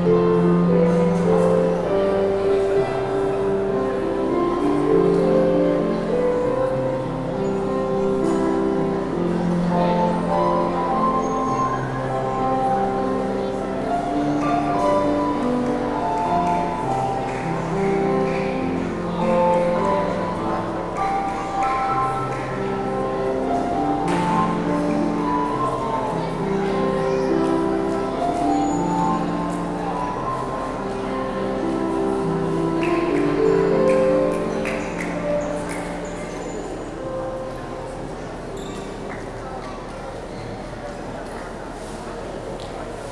Shopping Aricanduva - Avenida Aricanduva - Jardim Marilia, São Paulo - SP, Brasil - Pianista em uma praça de alimentação
Gravação de um pianista feita na praça de alimentação do Shopping Interlar Aricanduva no dia 06/04/2019 das 19:47 às 19:57.
Gravador: Tascam DR-40
Microfones: Internos do gravador, abertos em 180º
São Paulo - SP, Brazil, 6 April 2019, ~20:00